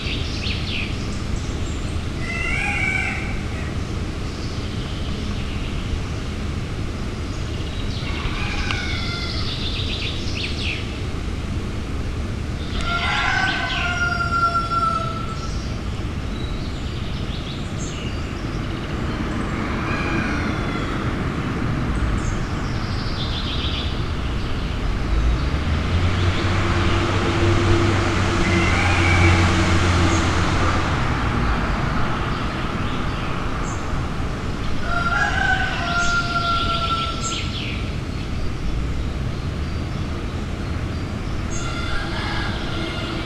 Schlosspark Ostrau
Schloss, Ostrau, park, rooster, poultry farm, fertilizer, rural, Background Listening Post
Ostrau, Germany